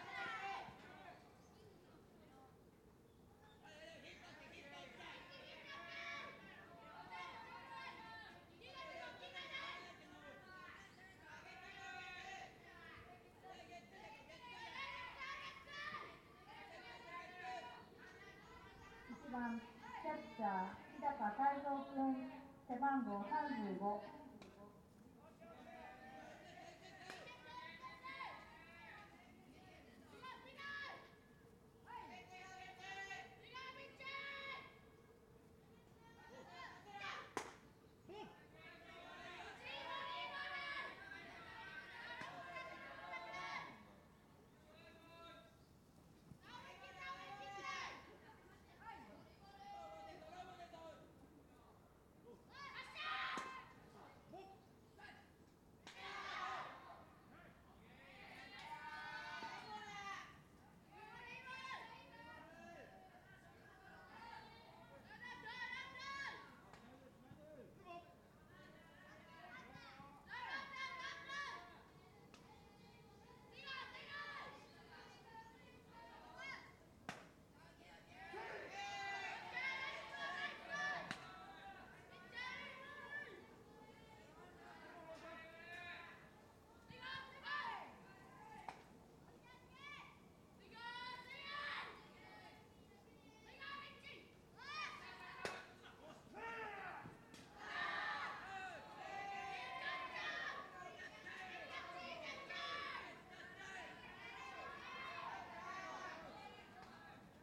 Anse, Wakamatsu Ward, Kitakyushu, Fukuoka, Japan - High School Baseball Practice
Sunday baseball practice in the Wakamatsu industrial area.